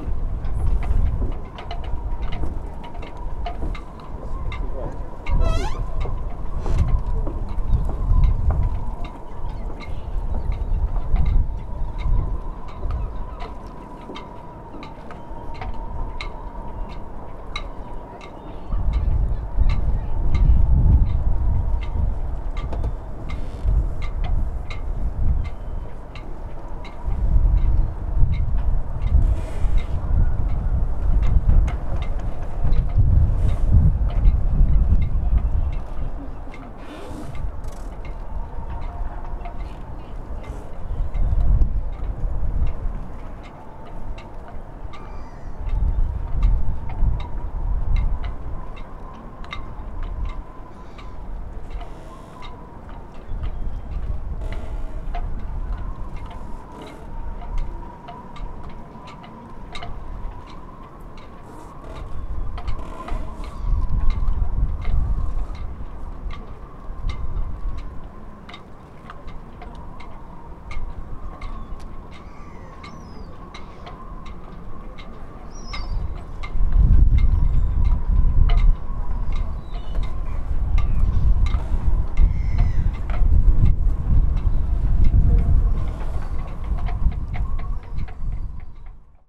Nabrzeże Beniowskiego, Gdynia, Polska - Ropes striking the mast of a small yacht.
Sunny autumn day in the marina. Moderate wind, wooden platform, walking people, seagulls. Olympus LS11